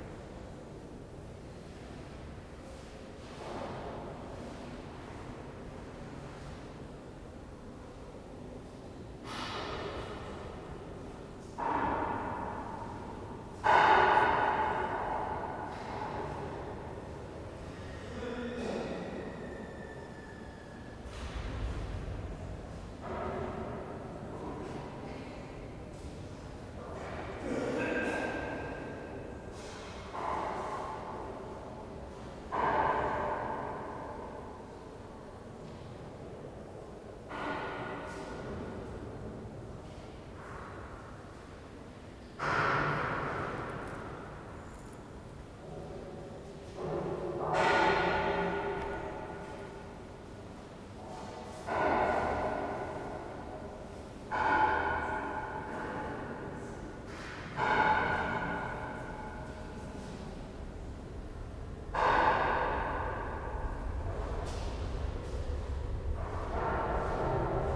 Rue de la Légion dHonneur, Saint-Denis, France - La Basilique Saint Denis
Ambience of St Denis. Restoration work on the south transept, visitors talking and milling around taking photos the edges of all softened by the live acoustic. Recorded using the internal microphones of a Tascam DR-40.